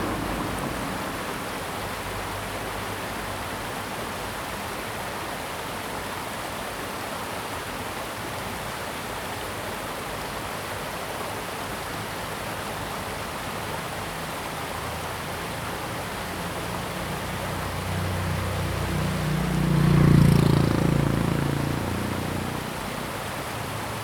草楠橋, 埔里鎮桃米里 - stream
stream
Zoom H2n MS+XY